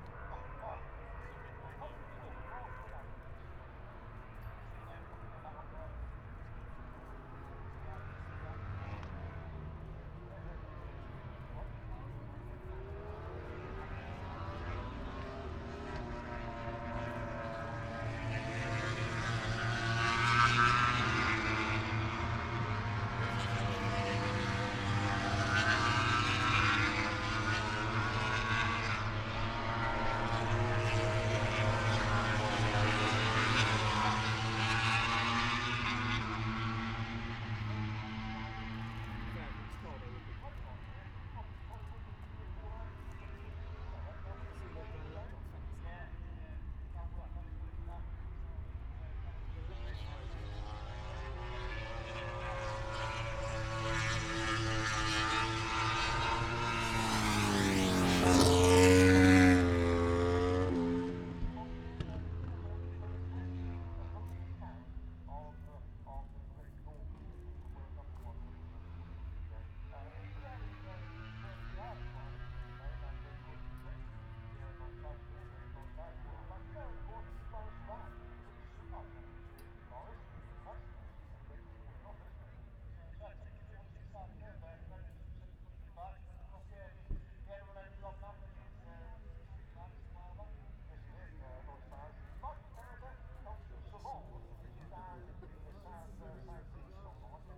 Silverstone Circuit, Towcester, UK - British Motorcycle Grand Prix 2017 ... moto grand prix ...
moto grand prix ... qualifying one ... Becketts corner ... open lavaliers clipped to chair seat ...
26 August 2017, 14:10